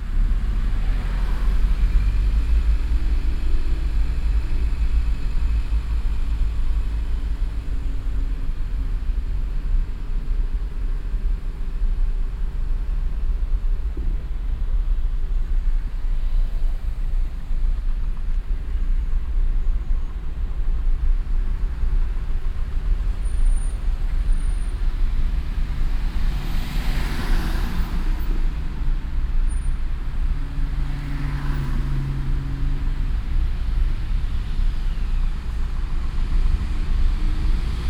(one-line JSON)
{"title": "cologne, autobahnabfahrt - innere kanalstrasse, im verkehr", "date": "2008-08-27 21:32:00", "description": "abfahrt von der a 57 nach köln nord - stauverkehr vor der ampel - nachmittags - anfahrt auf innere kanal straße - parallel stadtauswärts fahrende fahrzeuge - streckenaufnahme teil 03\nsoundmap nrw: social ambiences/ listen to the people - in & outdoor nearfield recordings", "latitude": "50.95", "longitude": "6.94", "altitude": "53", "timezone": "Europe/Berlin"}